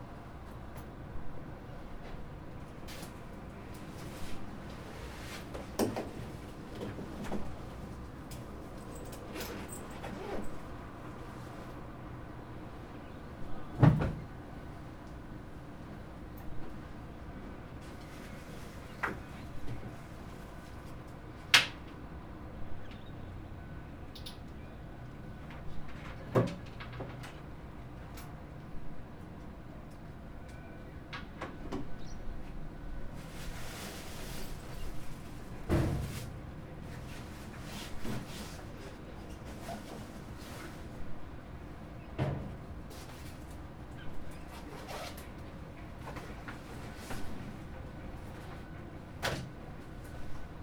neoscenes: office on a Sunday